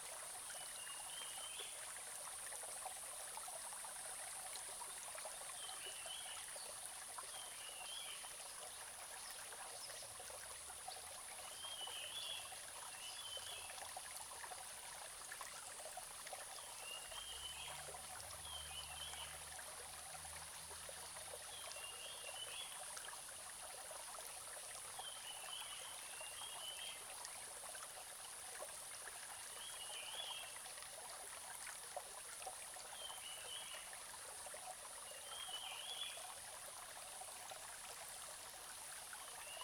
種瓜坑溪, 成功里 - Bird and stream sounds
Small streams, In the middle of a small stream, Bird sounds
Zoom H2n Spatial audio
Puli Township, Nantou County, Taiwan, 14 July 2016